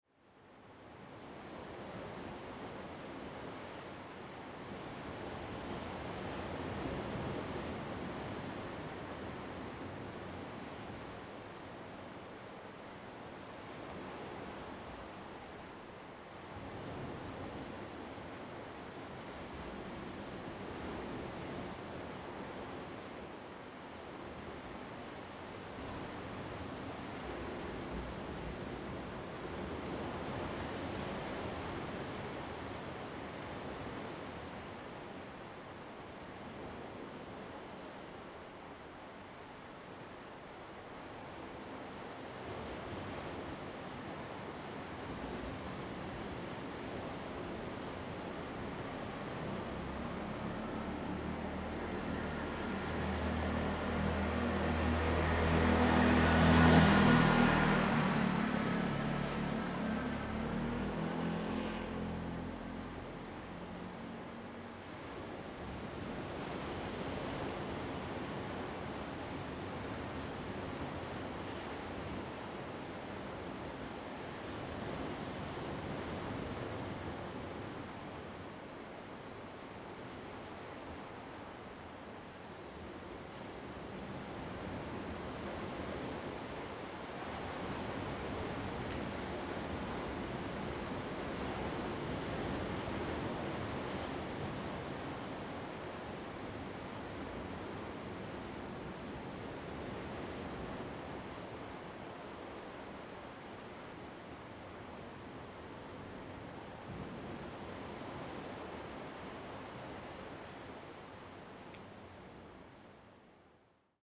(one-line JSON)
{"title": "龜灣鼻, Lüdao Township - Next to the coast", "date": "2014-10-31 10:19:00", "description": "Next to the coast, sound of the waves, Traffic Sound\nZoom H2n MS +XY", "latitude": "22.64", "longitude": "121.49", "altitude": "11", "timezone": "Asia/Taipei"}